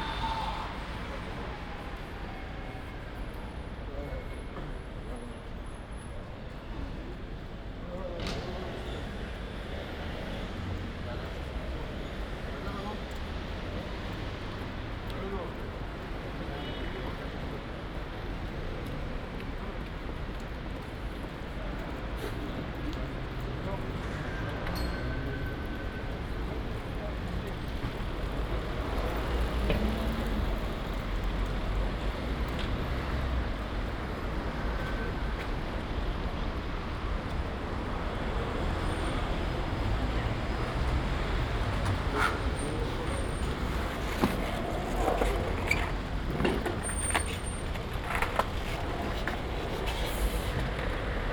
Paris soundwalks in the time of COVID-19 - Tuesday afternoon soundwalk in Paris in the time of COVID19: Soundwalk
"Tuesday afternoon soundwalk in Paris in the time of COVID19": Soundwalk
Tuesday, October 13th 2020: Paris is scarlett zone fore COVID-19 pandemic.
Round trip walking from airbnb flat to Gare du Nord and back.
Start at:3:24 p.m. end at 4:24 p.m. duration 59’53”
As binaural recording is suggested headphones listening.
Both paths are associated with synchronized GPS track recorded in the (kmz, kml, gpx) files downloadable here:
For same set of recordings go to:
France métropolitaine, France, 13 October 2020, 15:24